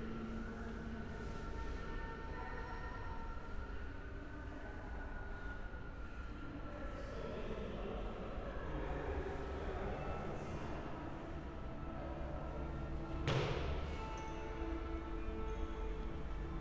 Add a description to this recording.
opening day, broom ballet (Besenballett) preparing for performance, room near entrance, sounds of an exhibition, people passing by, ambience, (Sony PCM D50, Primo EM272)